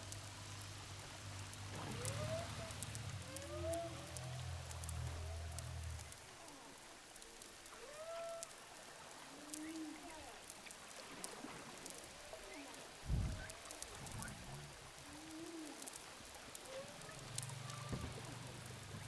whales sound hydrophone, saint gilles de la reunion
au large de saint gilles de la Réunion baleine à bosse